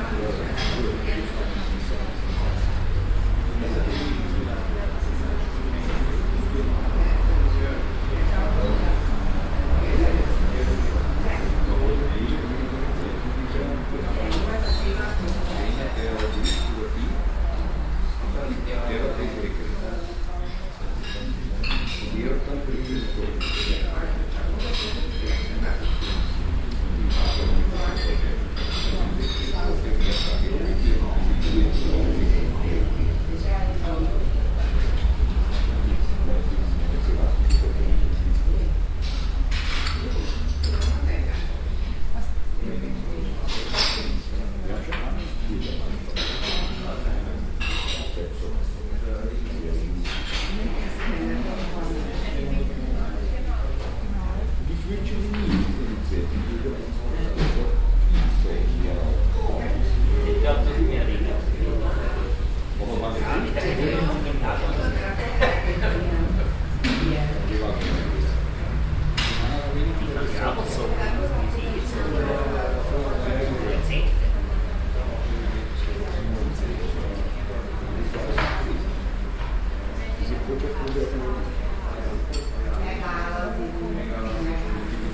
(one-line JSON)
{"title": "vienna, josefstätterstrasse, coffee house - wien, josefstätterstrasse, cafe haus", "date": "2008-05-20 23:49:00", "description": "cityscapes, recorded summer 2007, nearfield stereo recordings", "latitude": "48.21", "longitude": "16.35", "altitude": "198", "timezone": "Europe/Berlin"}